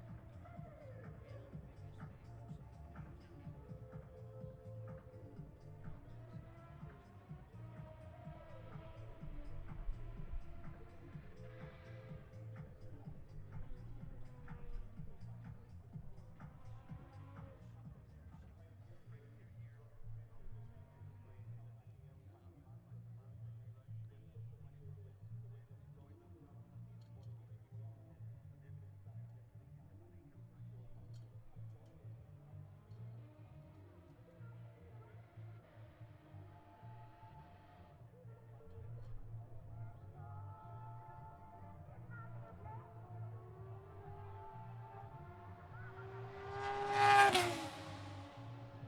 Towcester, UK - british motorcycle grand prix 2022 ... moto two ...
british motorcycle grand prix 2022 ... moto two free practice three ... zoom h4n pro integral mics ... on mini tripod ... plus disco ...